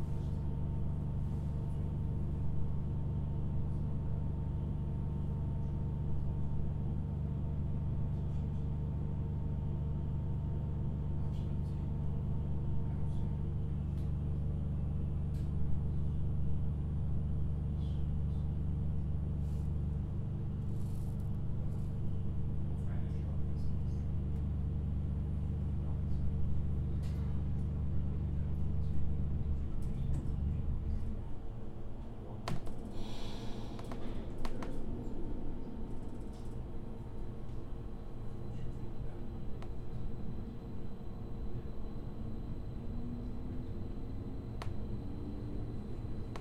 The sounds of a train between two stations